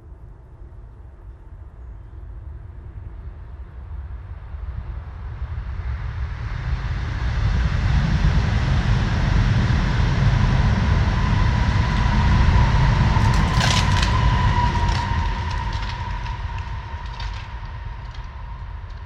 Rain, trains, clangy bells, autumn robin, ravens, stream from the Schöneberger Südgelände nature reserve, Berlin, Germany - Train thumps and crackles
Early morning just after sunrise. A cold and clear Sunday, slightly frosty. The atmosphere is still, the rain has stopped, wildlife is silent, the city very distant. Every two or three minutes the quiet is punctuated by powerful train moving fast. Some seem to leave a trail of harsh sharp crackling in their wake. I've not heard this sound before and don't know what it is - maybe electrical sparks on icy cables.
Deutschland, 28 November